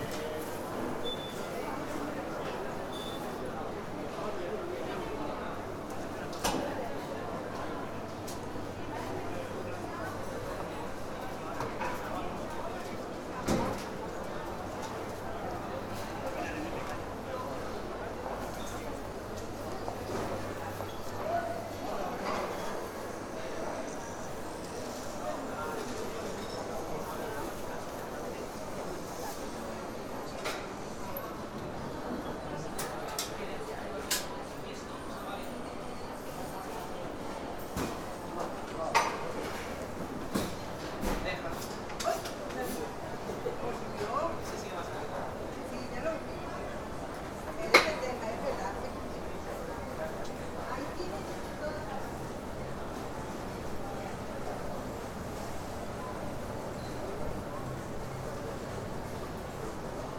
Mercat de Santa Caterina
Market with a Gaudinian style structure.
Barcelona, Spain, 24 January